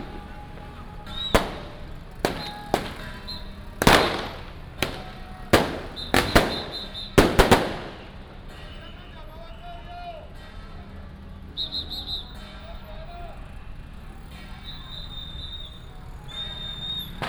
Firecrackers and fireworks, Many people gathered at the intersection, Matsu Pilgrimage Procession